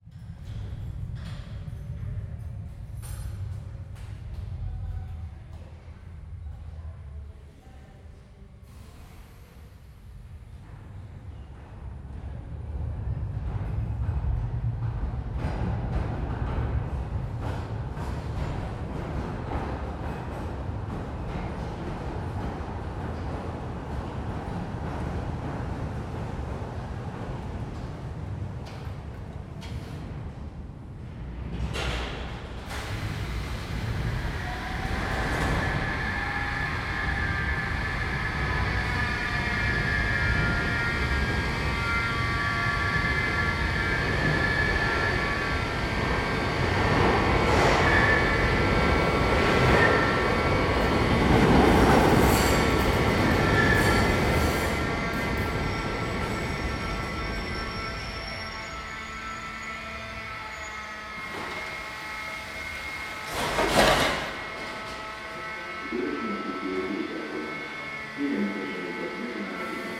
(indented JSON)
{
  "title": "Budapest, Bajcsy-Zsilinszky út, Hungary - (-191) Platform at Bajcsy-Zsilinszky út metro station",
  "date": "2017-01-22 15:44:00",
  "description": "Stereo recording from a platform at Bajcsy-Zsilinszky út metro station in Budapest.\nrecorded with Zoom H2n\nposted by Katarzyna Trzeciak",
  "latitude": "47.50",
  "longitude": "19.06",
  "altitude": "114",
  "timezone": "Europe/Budapest"
}